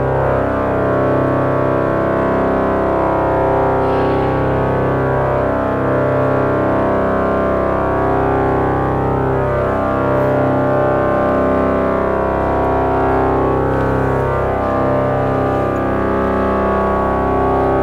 essen, hohe domkirche, inside church - essen, hohe domkirche, organ tune
Eine weitere Aufnahme in der Domkirche. Der Klang der Orgel während sie gestimmt wird.
Another recording inside the dom church. The sound of the organ as it is tuned.
Projekt - Stadtklang//: Hörorte - topographic field recordings and social ambiences
Essen, Germany